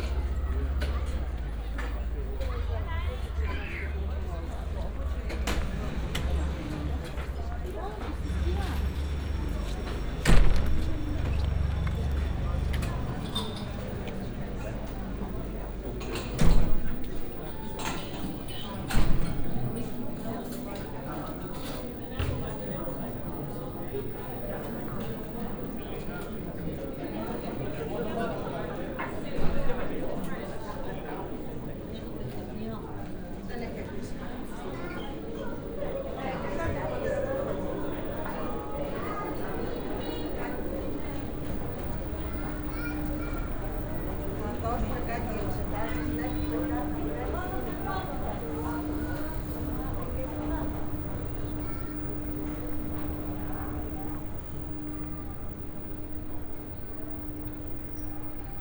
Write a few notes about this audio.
(binaural) going outside through the restaurant on the terrace and back inside. quite a few visitors having meals/coffee. place is busy. some heavy construction near the museum. (sony d50 + luhd pm01bin)